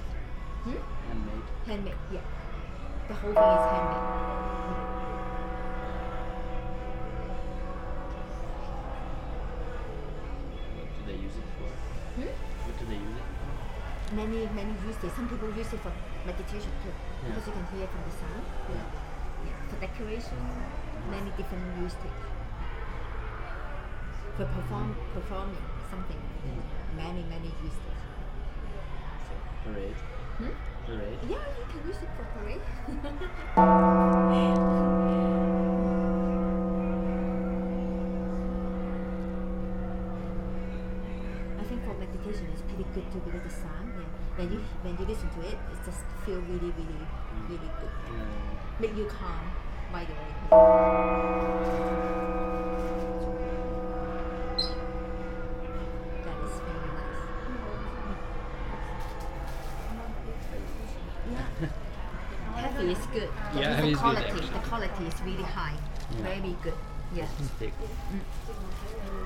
checking a gong, Chinese Culture Center Calgary
playing a gong in a shop in the Chinese culture center, Calgary